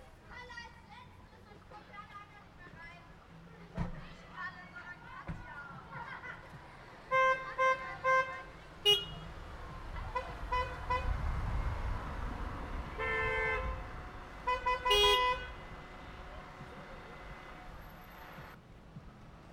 Grevenbroich Am Sodbach, Grevenbroich, Deutschland - Parking spot
Traffic jam in the parking lot
Grevenbroich, Germany